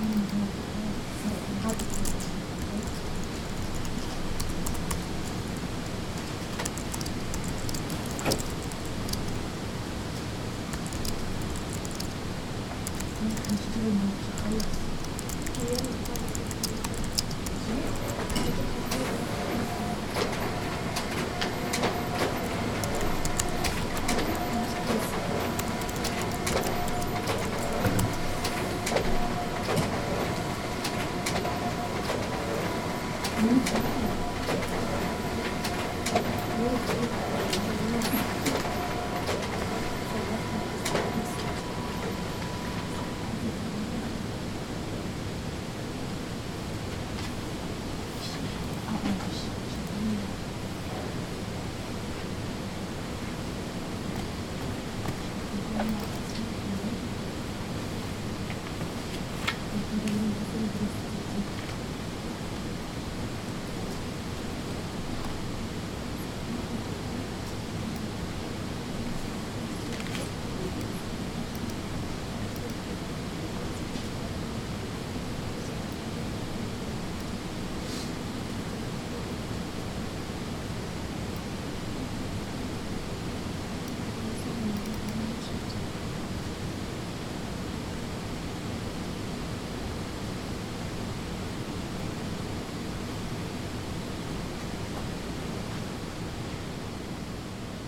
University of Colorado Boulder, Regent Drive, Boulder, CO, USA - Mac Computer Lab
Typing in the Mac lab next to a printer
14 February, 04:39